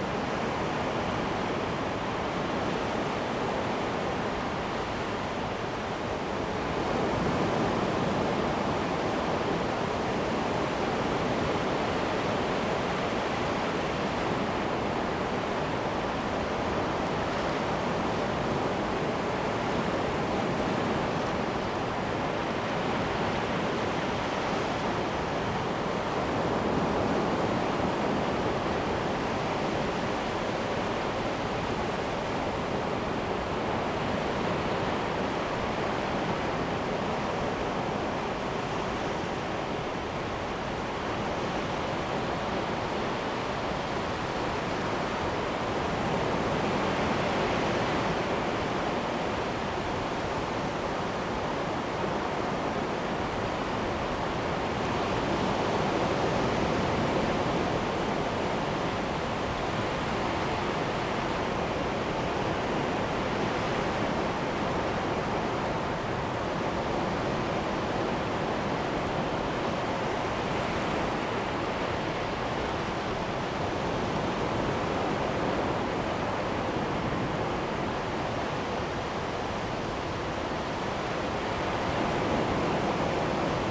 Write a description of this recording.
at the seaside, Waves, High tide time, Zoom H2n MS+XY